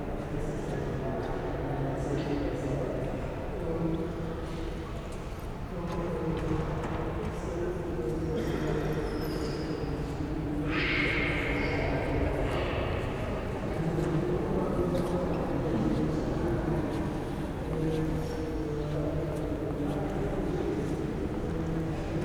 {"title": "wiesbaden, kurhausplatz: kurhaus - the city, the country & me: foyer", "date": "2016-05-06 17:06:00", "description": "visitors in the foyer topped by a 21 m high dome\nthe city, the country & me: may 6, 2016", "latitude": "50.08", "longitude": "8.25", "altitude": "125", "timezone": "Europe/Berlin"}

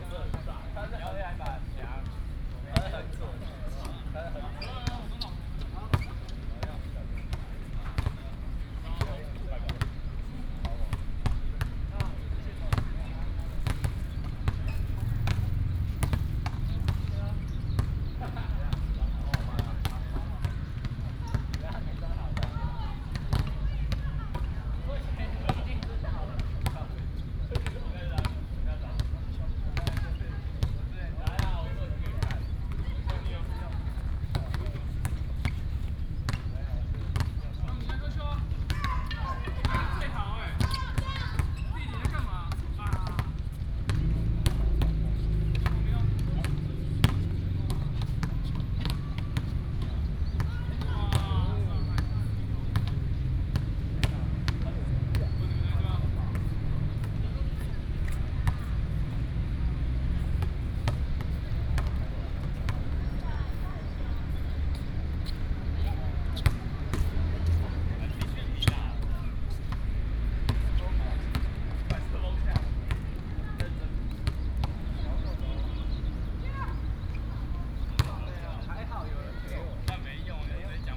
{"title": "礁溪鄉礁溪國小, Yilan County - Play basketball", "date": "2014-07-07 17:00:00", "description": "Play basketball, Traffic Sound, Very hot weather", "latitude": "24.82", "longitude": "121.77", "altitude": "18", "timezone": "Asia/Taipei"}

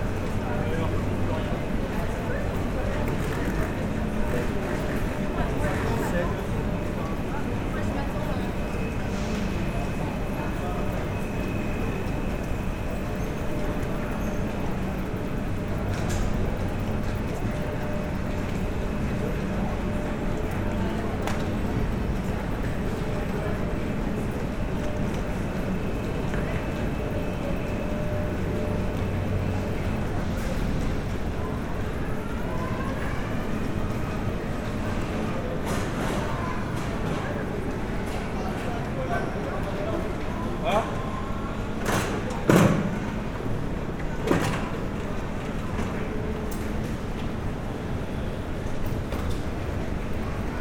{"title": "Gare Paris Montparnasse - Paris, France - Paris Montparnasse station", "date": "2017-08-03 14:22:00", "description": "The Paris Motparnasse station on a very busy day. It's nearly impossible to hear people talking, as there's a lot of noise coming from the locomotives engines.", "latitude": "48.84", "longitude": "2.32", "altitude": "60", "timezone": "Europe/Paris"}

